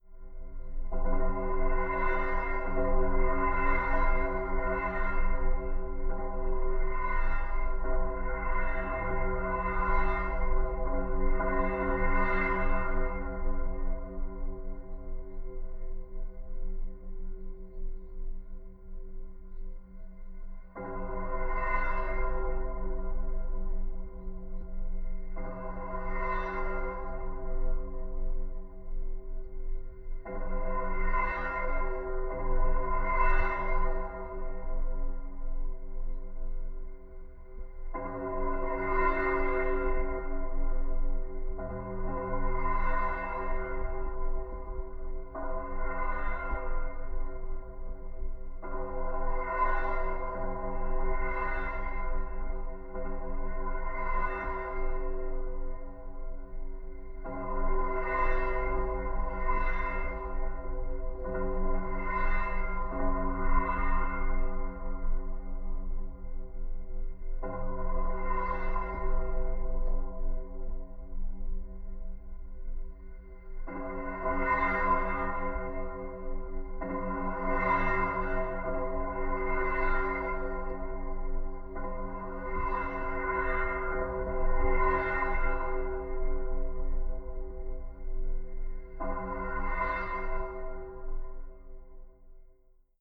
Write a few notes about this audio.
sound / vibrations of the Autobahn traffic within a metal structure that fixes the sidewalk. (Sony PCM D50, DIY contact mics)